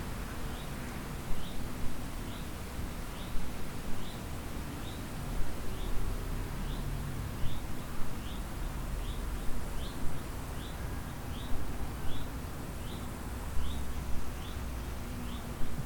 Bergerac, France - Wind and train in the distance
Recorded using a H4n